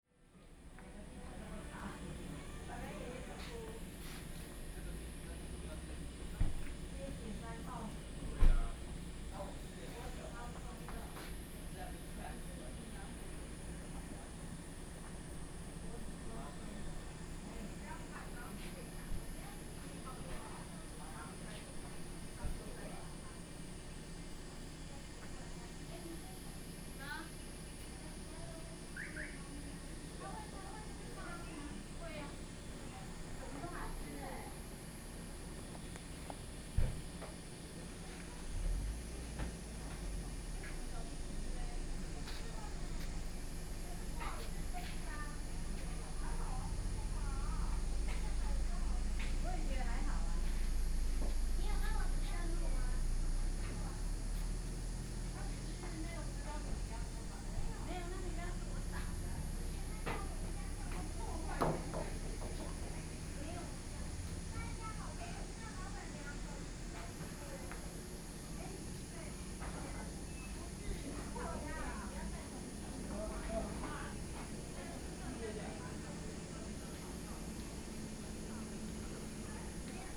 In a small Tourists Recreation Area, Cicadas sound, Very hot days